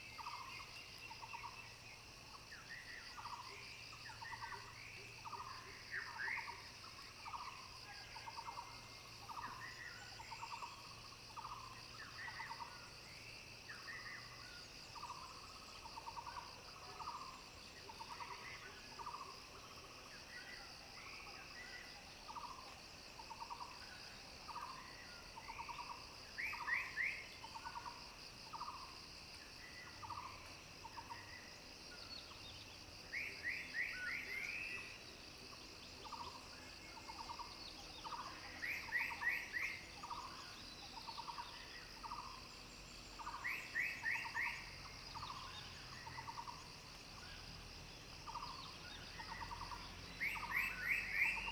Frogs sound, Bird calls
Zoom H2n MS+XY